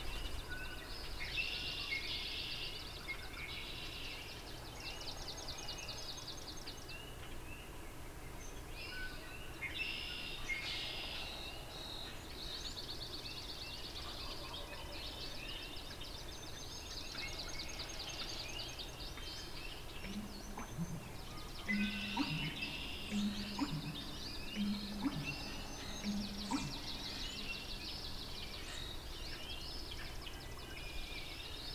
Dawn chorus activity at 5:30 am. Recorded at Warbler's Roost in unorganized township of Lount in Parry Sound District of Ontario.
Ontario, Canada, 2 May 2020, 05:30